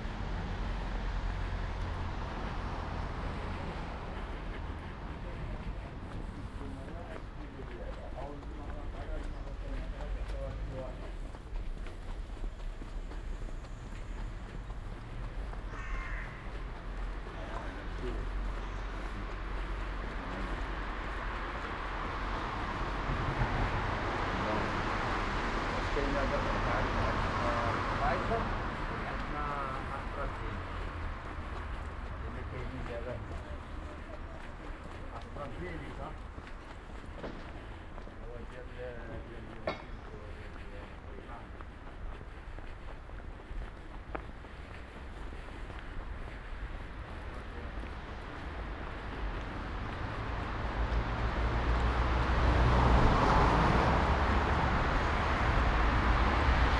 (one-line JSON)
{"title": "Ascolto il tuo cuore, città. I listen to your heart, city. Chapter CLXIV - Sunday transect NW in Torino in the time of COVID19: Soundwalk", "date": "2021-03-28 03:00:00", "description": "\"Sunday transect NW in Torino in the time of COVID19\": Soundwalk\nChapter CLXIV of Ascolto il tuo cuore, città. I listen to your heart, city\nSunday, March 28st 2021. One way walk to a borderline “far destination”: a transect direction NorthFirst day of summer hour on 2021. One year and eighteen days after emergency disposition due to the epidemic of COVID19.\nStart at 2:22 p.m. end at 3:33 p.m. duration of recording 01:11:10.\nThe entire path is associated with a synchronized GPS track recorded in the (kmz, kml, gpx) files downloadable here:", "latitude": "45.06", "longitude": "7.67", "altitude": "245", "timezone": "Europe/Rome"}